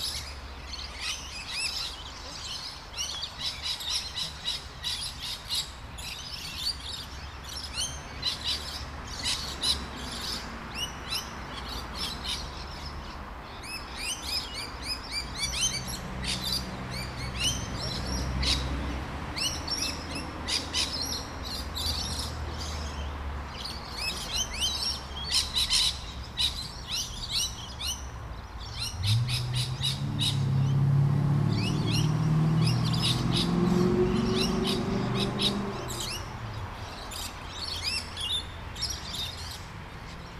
Mitchelton, Brisbane. Down by the Creekbed.
Late afternoon, bird calls, running creek water, cars in nearby street.